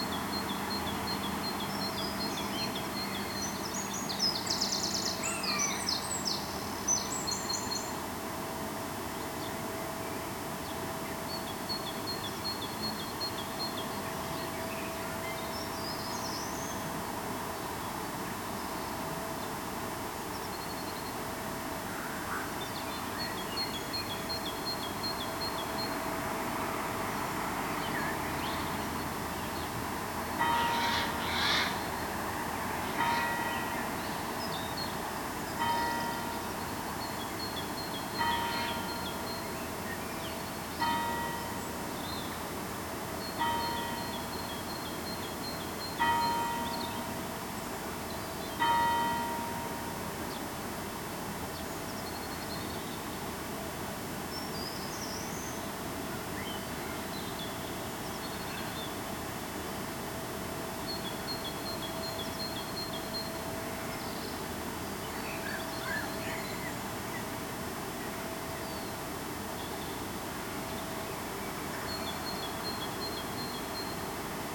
Rue Devant les Grands Moulins, Malmedy, Belgique - Morning birds, bells at 8 am.
Drone from the air conditionning, or electric?
Tech Note : SP-TFB-2 AB microphones → Sony PCM-M10.